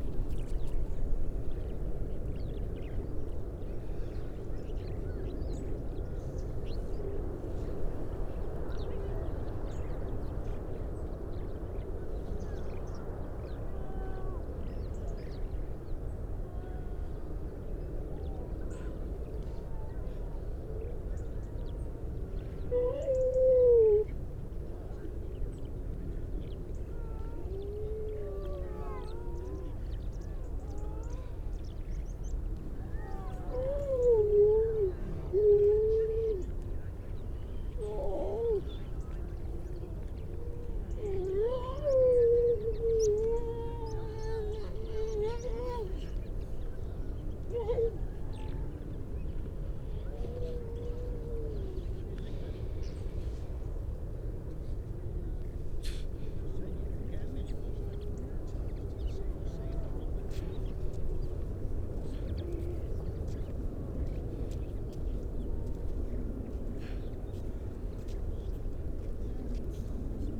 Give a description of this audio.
grey seals soundscape ... mainly females and pups ... parabolic ... bird call from ... skylark ... dunnock ... mipit ... pied wagtail ... starling ... chaffinch robin ... crow ... all sorts of background noise ...